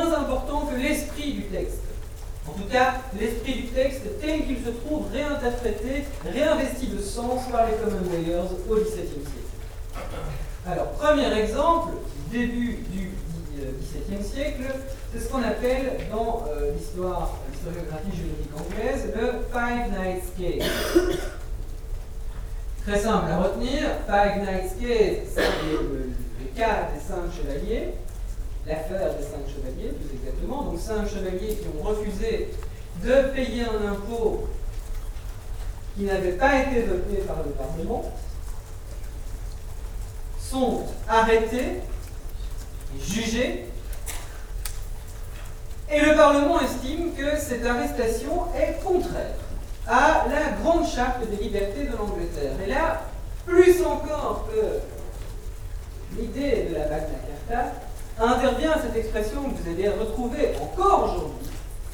Centre, Ottignies-Louvain-la-Neuve, Belgique - A course of antic history
A course of antic history, in the Agora auditoire.